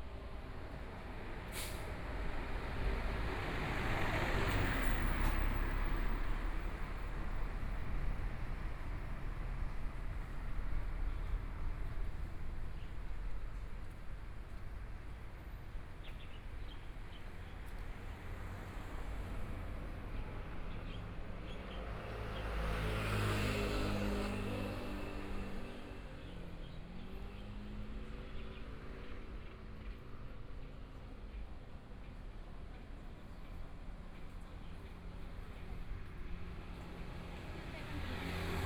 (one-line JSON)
{"title": "花蓮市民生里, Taiwan - soundwalk", "date": "2014-02-24 14:43:00", "description": "Traffic Sound, Through the different streets, Walking into the street markets and shops\nBinaural recordings\nZoom H4n+ Soundman OKM II", "latitude": "23.98", "longitude": "121.61", "timezone": "Asia/Taipei"}